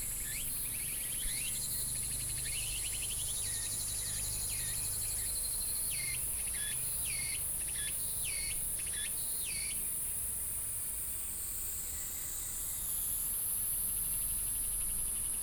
Woody House, 桃米里 Puli Township - Bird calls
Bird calls, Chicken sounds
Puli Township, Nantou County, Taiwan